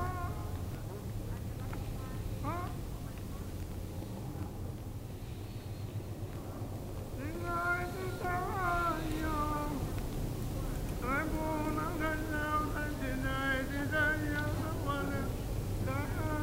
beijing, temple of heaven, mann singt
recorded in the evening nov 07, close afer dawn, in between the two main temple buildings. voices of a man singing and a distant voice repeatively shouting
international city scapes - social ambiences and topographic field recordings
19 May